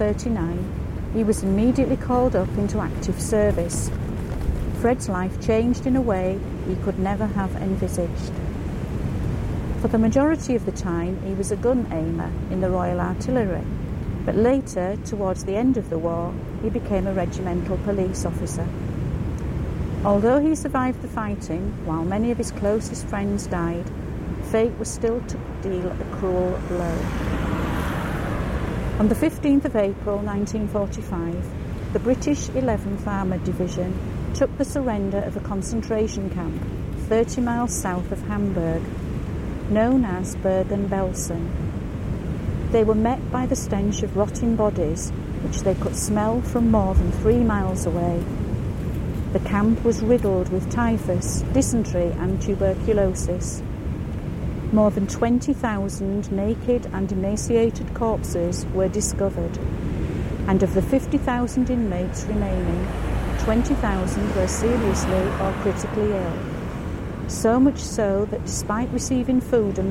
freddie gilroy and the belsen stragglers ... on the north bay promenade at Scarborough is possibly a three times size of an old man looking out to sea ... he sits cradling a walking stick sat on an equally impressive seat ... many people stop to read the information board ... so that is what we did ... about 3:20 we get dumped on by a large wave hitting the sea wall ...
Scarborough, UK, 4 September 2015, 10:22